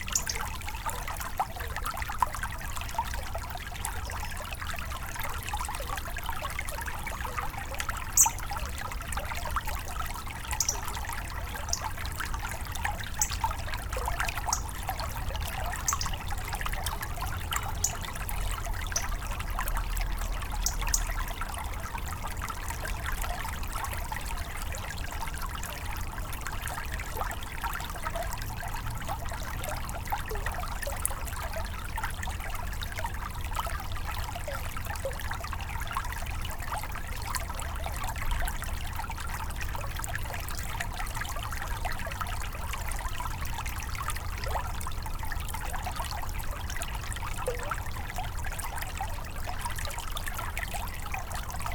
Court-St.-Étienne, Belgique - A river
A small river, called "Le Ry d'Hez".